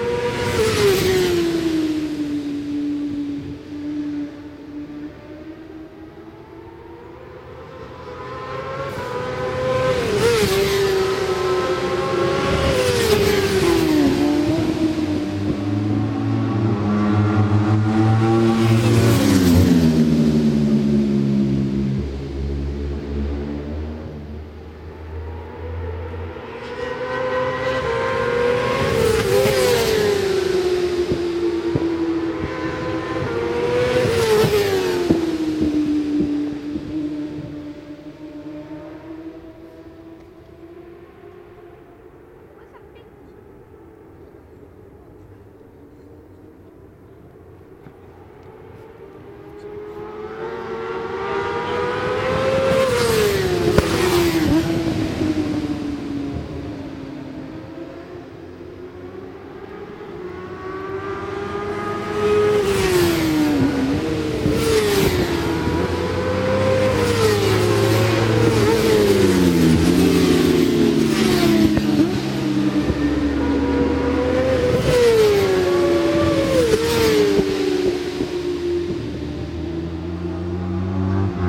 Brands Hatch GP Circuit, West Kingsdown, Longfield, UK - WSB 1998 ... Supersports 600 ... FP 3 ...
WSB 1998 ... Supersports 600 ... FP3 ... one point stereo to minidisk ... correct day ... optional time ...